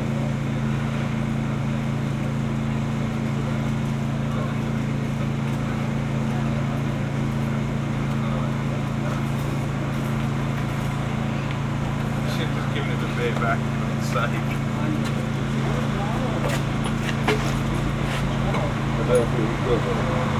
berlin, friedelstraße: baustelle - the city, the country & me: emergency repair of a power line
saturday night emergency repair of a power line
the city, the country & me: july 7, 2012
Berlin, Germany, 7 July 2012